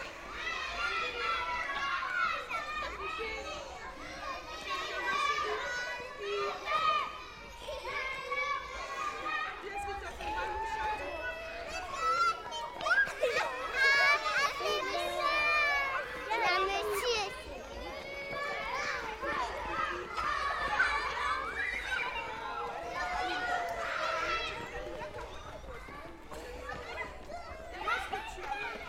Rue Pasteur, Béthune, France - École Maternelle Pasteur - Béthune - Cour de récréation.
École Maternelle Pasteur - Béthune
Cour de récréation.
ZOOM H6